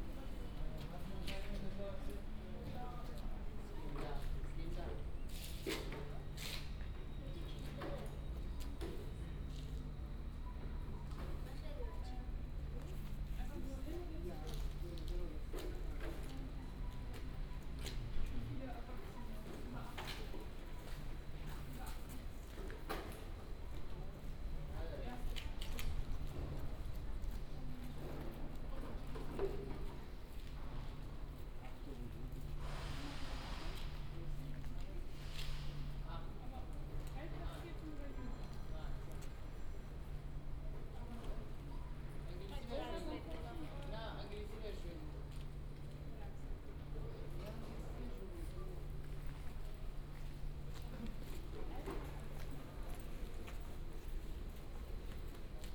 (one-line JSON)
{"title": "Osnabrück Hbf, Deutschland - station ambience", "date": "2019-04-05 17:55:00", "description": "Osnabrück Hauptbahnhof, waiting for departure, main station ambience\n(Sony PCM D50, OKM2)", "latitude": "52.27", "longitude": "8.06", "altitude": "68", "timezone": "Europe/Berlin"}